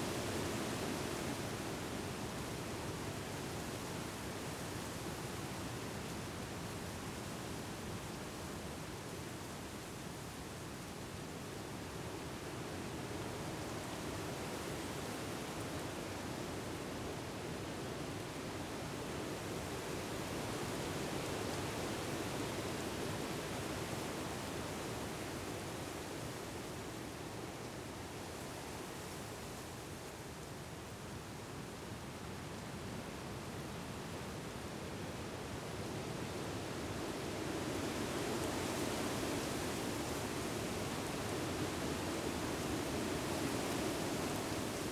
Troon, Camborne, Cornwall, UK - Wind Through The Trees
Been walking through these woods a lot recently listening to the wind blowing through the trees, so I thought I would head down there on a dryish day and record an atmosphere. I used a pair of DPA4060 microphones, Sound Devices Mixpre-D and a Tascam DR-100 to capture the recording. I've done a little bit of post-processing, only slight EQ adjustments to remove some low frequency rumble that was in the recording.
23 December, ~17:00